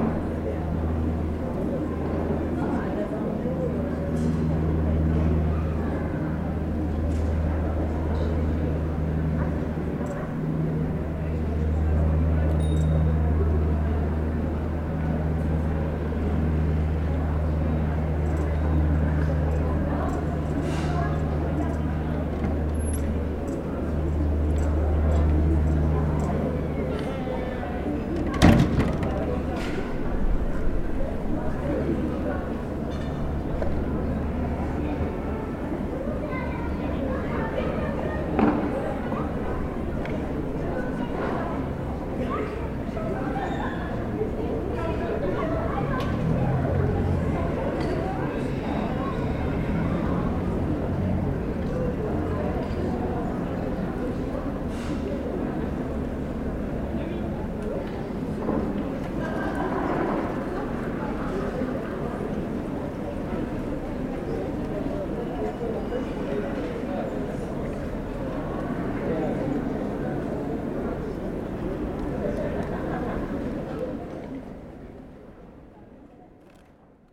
Rue Prte Reine, Chambéry, France - Place St Léger
Place St Léger au pied de la fontaine non activée, beaucoup de monde sur les terrasses de bars avec le beau temps.